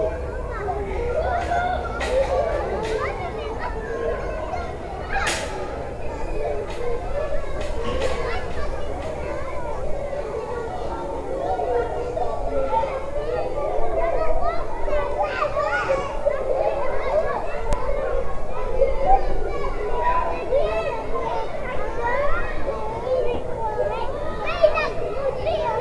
Court-St.-Étienne, Belgique - Ecole de Sart
A school called école de Sart. Sounds are coming from very young children playing.
Court-St.-Étienne, Belgium, 4 September 2015